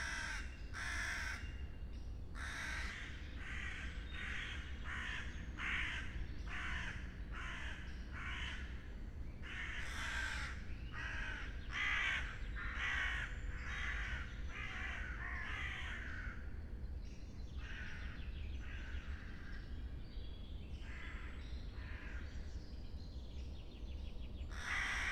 near small pond, Piramida, Maribor - walk for Diana and Actaeon
deer, crows, summer solstice morning